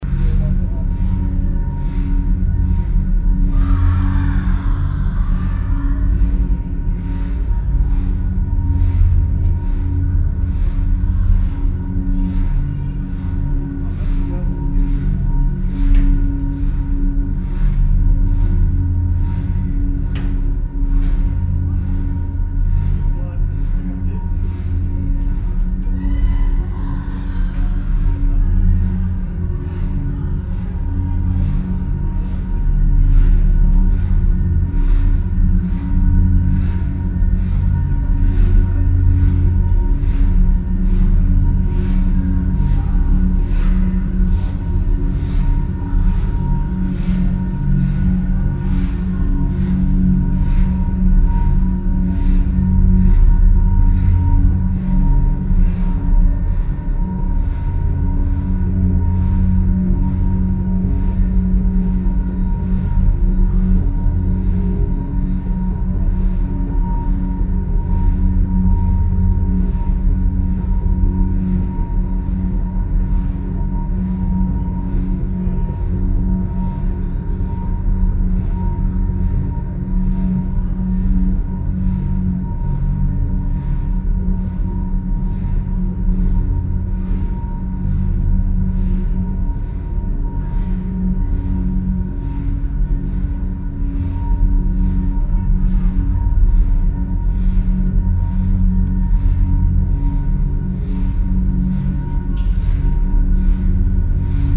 völklingen, völklinger hütte, gebläsehalle
gebläsehalle des weltkulturerbes, hier mit klängen einer permanenten installation von stephan mathieu
soundmap d: social ambiences/ listen to the people - in & outdoor nearfield recordings